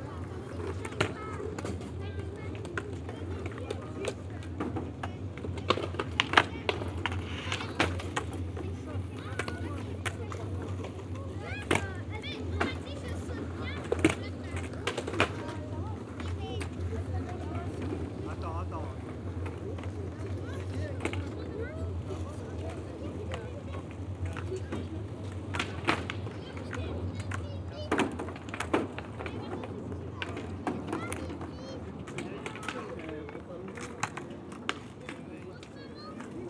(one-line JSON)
{"title": "Brussel, Belgium - Skatepark", "date": "2018-08-25 11:00:00", "description": "On the Brussels skatepark, young girls playing skateboards. On the beginning, a mother taking care to her children. After a class is beginning. During this recording, a junkie asked me for drugs !", "latitude": "50.84", "longitude": "4.35", "altitude": "30", "timezone": "GMT+1"}